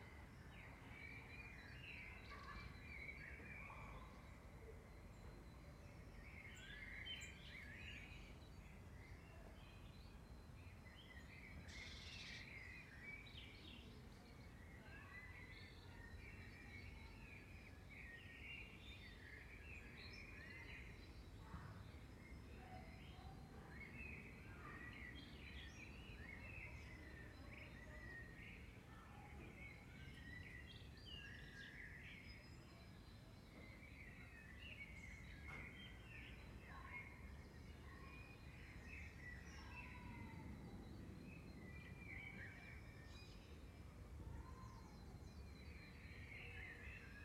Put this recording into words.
Recorded with two DPA 4061 Omni directional microphones in a binaural setup/format. Preferably listen with a decent pair of headphones. Easy and fairly calm evening in village on the outskirts of Amsterdam.